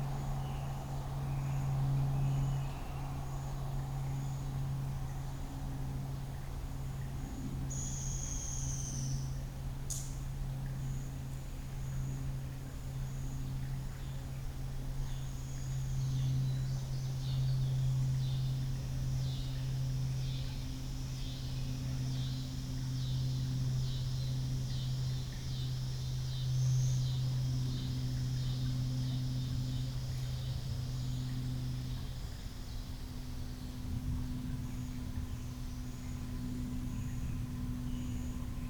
Union Star Rd, West Fork, AR, USA - Late Afternoon under a bridge
Lazy, late afternoon recording from under a bridge as day turns into evening in West Fork, Arkansas. It's about 88 degrees F. There's a small stream running through the large, open-ended concrete box of the bridge. Birds, insects, surprising frogs in the middle, evening cicada chorus starting to come on at the end of the recording. Occasional cars driving overhead and distant propeller planes.
Arkansas, United States